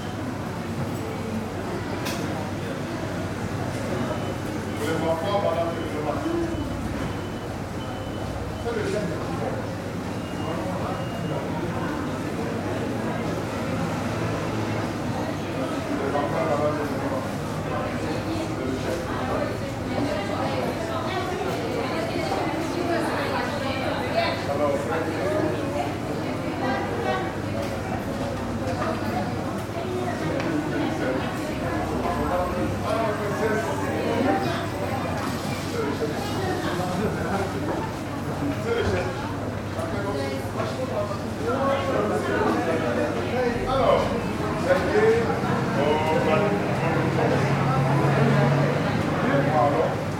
Chau. d'Ixelles, Ixelles, Belgique - Underground gallery ambience
A man is collecting money to prevent Noma disease.
Tech Note : Sony PCM-M10 internal microphones.
Région de Bruxelles-Capitale - Brussels Hoofdstedelijk Gewest, België / Belgique / Belgien, 27 August 2022